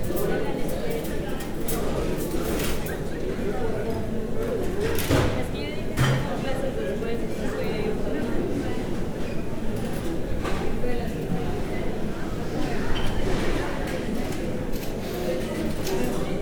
The noisy ambience of an university restaurant. Students can find here cheap but good foods.